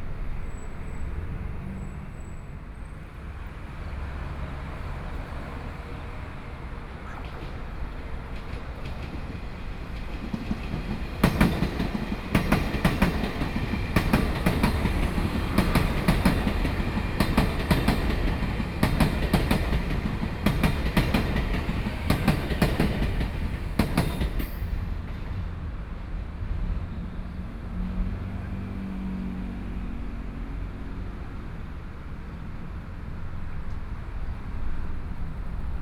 倉前路, 羅東鎮信義里 - Trains traveling through
In the nearby railroad tracks, Traffic Sound, Trains traveling through, Birds
27 July, ~19:00